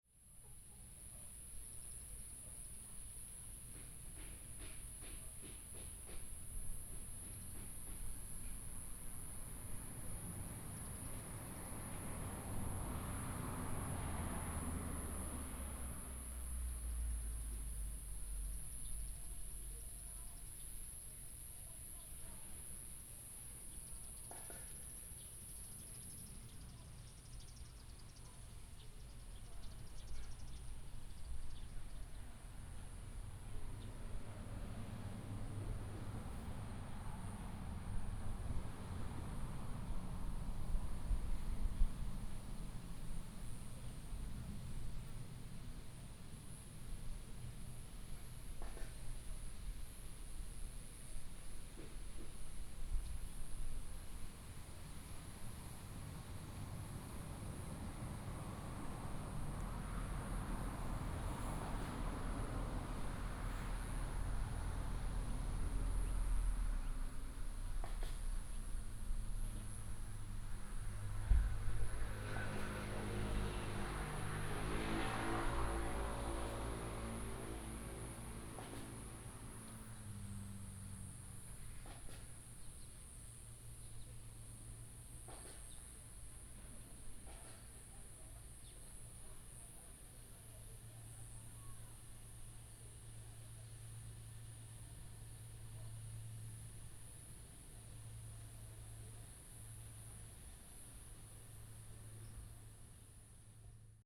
In the square, traffic sound, Far from the woodworking sound